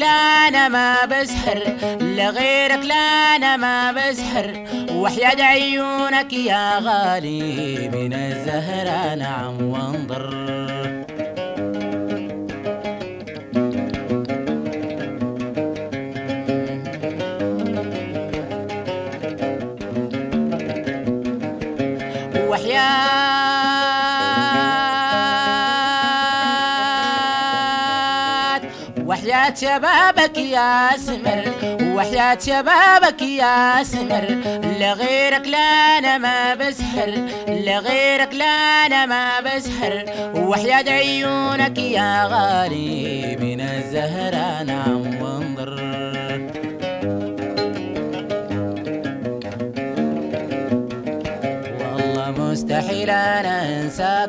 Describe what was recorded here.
On Tuti island (Djazira Tuti)i recorded taxi driver and singer Abdellatief Ahmad Idriss.